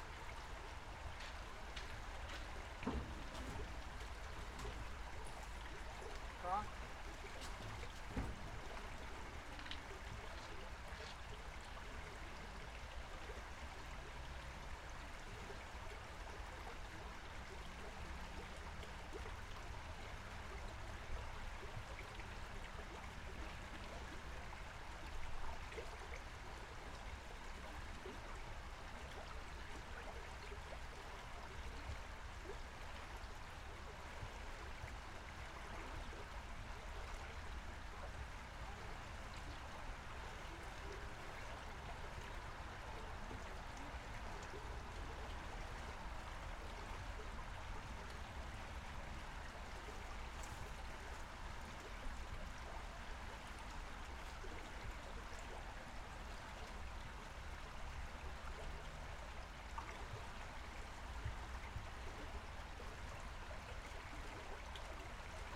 Vilnius, Lithuania, under Raiteliu bridge
microphones under pedestrians bridge over river Vilnia
Vilniaus miesto savivaldybė, Vilniaus apskritis, Lietuva, 30 October 2021, ~17:00